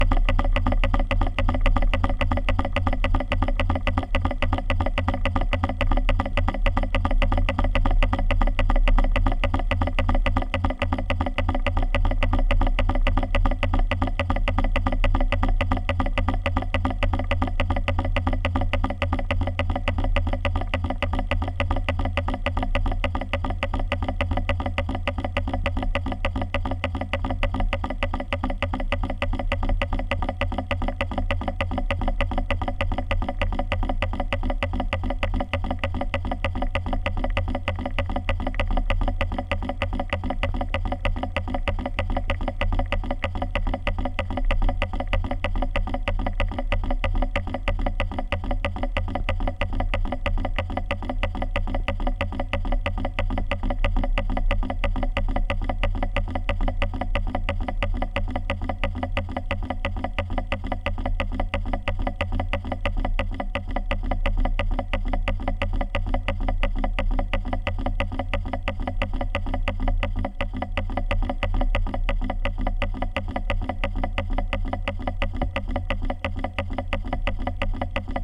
Al Quoz - Dubai - United Arab Emirates - Clicking Gas Meter
Clicking gas meter on the pavement recorded with a Cold Gold contact microphone.
"Tracing The Chora" was a sound walk around the industrial zone of mid-Dubai.
Tracing The Chora
16 January 2016